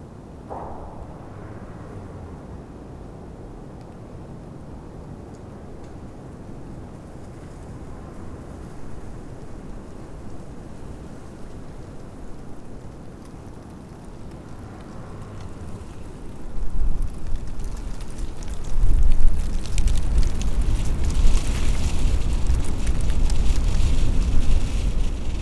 Berlin: Vermessungspunkt Maybachufer / Bürknerstraße - Klangvermessung Kreuzkölln ::: 31.01.2012 ::: 02:30